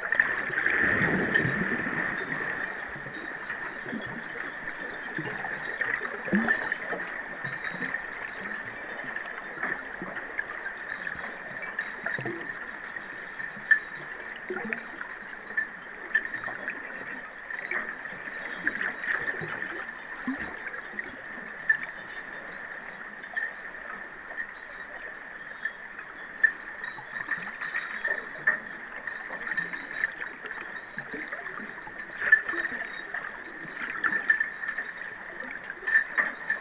Varvsgatan, Härnösand, Sverige - Under water 2
The sound is recorded underwater at the quay on Skeppsbron in Härnösand. It's a strong wind. The sound is recorded with hydrophonic microphones.
Norrland, Sverige